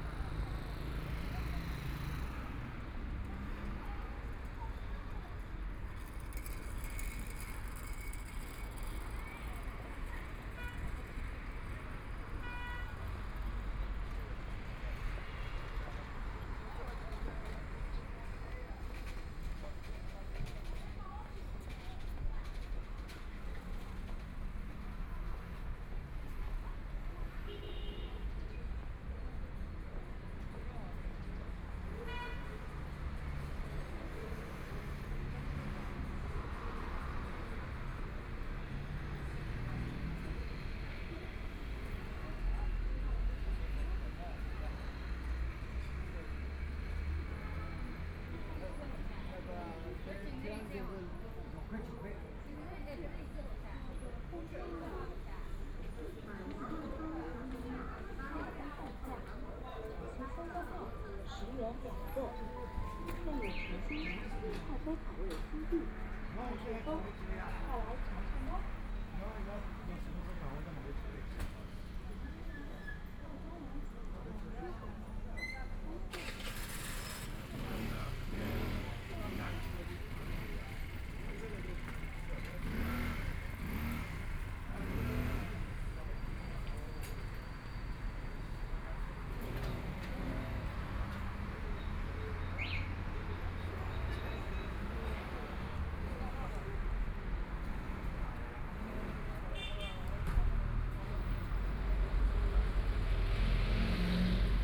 Walking on the street, various shops walking between residents, Traffic Sound, Binaural recording, Zoom H6+ Soundman OKM II
Shanghai, China, 26 November